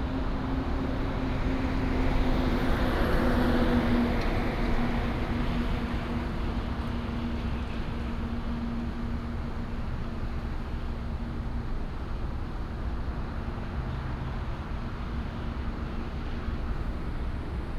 Standing next to the highway, traffic sound, Binaural recordings, Sony PCM D100+ Soundman OKM II
East District, Hsinchu City, Taiwan